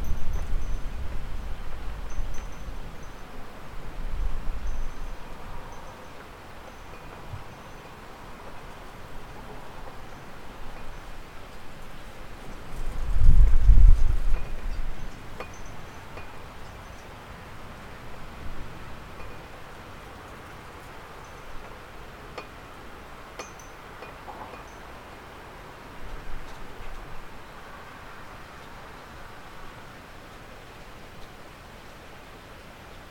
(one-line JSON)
{"title": "Alte Str., Forbach, Deutschland - Black Forest village at midnight", "date": "2019-04-24", "description": "Langenbrand, a small village in the northern black forest, recording time 1 minute before and after midnight, wind, metal, wood and glass sounds, church bells", "latitude": "48.70", "longitude": "8.36", "altitude": "270", "timezone": "Europe/Berlin"}